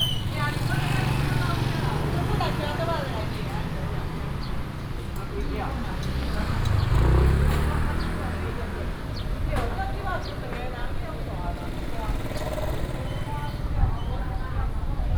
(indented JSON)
{
  "title": "Kailan Rd., Toucheng Township - soundwalk",
  "date": "2014-07-07 10:21:00",
  "description": "Walking through the streets in different, Traditional market town, Very hot weather, Traffic Sound",
  "latitude": "24.86",
  "longitude": "121.82",
  "altitude": "12",
  "timezone": "Asia/Taipei"
}